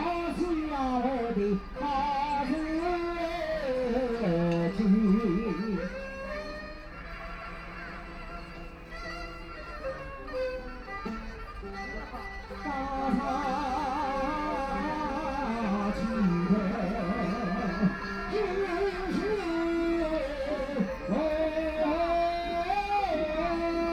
Heping Park, Shanhai - singing
Old people are singing traditional songs, Erhu, Binaural recording, Zoom H6+ Soundman OKM II